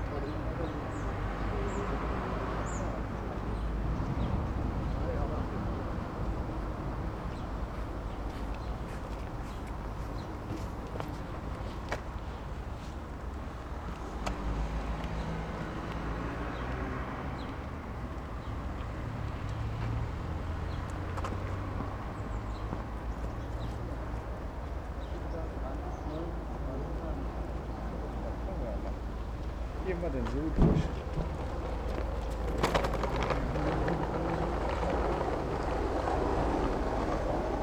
Berlin: Vermessungspunkt Friedelstraße / Maybachufer - Klangvermessung Kreuzkölln ::: 10.08.2011 ::: 10:47
Berlin, Germany, 10 August, 10:47